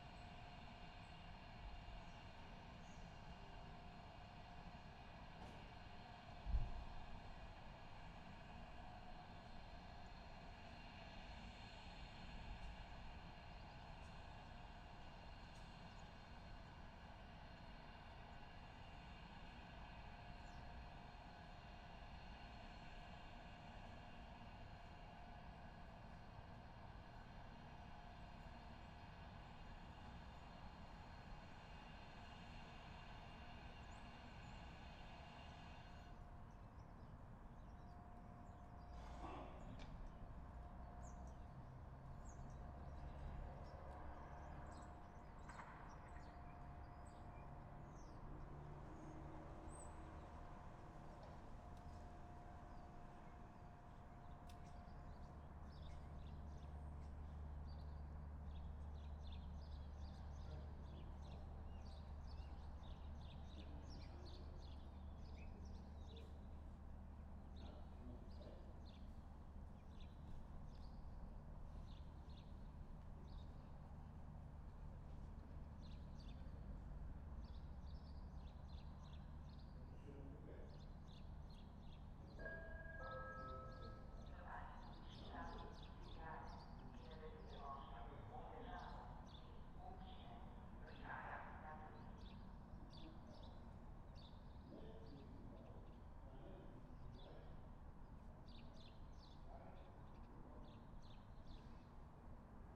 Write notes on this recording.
Passenger and cargo trains on railway Divača, Slovenia. Recorded with Lom Uši Pro, MixPre II.